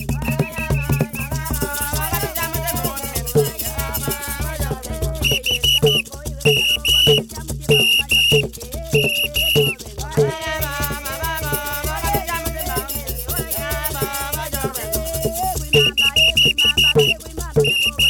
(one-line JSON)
{"title": "Kariyangwe, Binga, Zimbabwe - Playing Chilimba...", "date": "2016-07-23 11:10:00", "description": "Chilimba is a traditional form of entertainment among the Batonga. Playing Chilimba involves a group of people, often women, in joyful singing, drumming and dancing. The lyrics of the Chilimba songs may however also contain teachings, such as here, “don’t fall in love with a married person”.\nIn contemporary Chitonga, the word “chilimba” also means “radio”.", "latitude": "-17.98", "longitude": "27.51", "altitude": "887", "timezone": "GMT+1"}